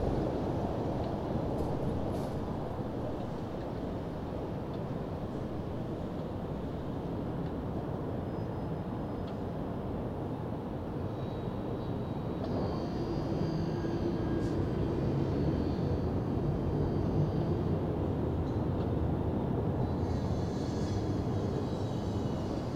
Johannisplatz 18 - Leipzig, Germany - the burial site of Sebastian Bach in the COVID-19 Pandemic
Recorded (with a Tascam DR-100 mkIII) inside the circle that marks the burial site of Sebastian Bach.
I made very small edits mainly to erase wind.
This is usually one of the busiest streets in Leipzig and it's now running on minimal levels but still... Because of the COVID-19 pandemic i was expecting it to be really quiet...
Listen to it, understand your center, stay calm.